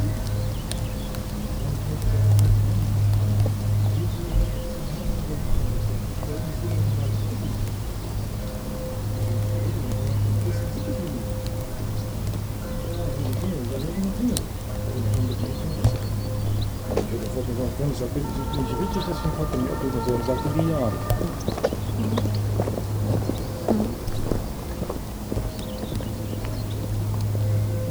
der weg zum garten. gotha, thüringen.
Gotha, Deutschland, 15 June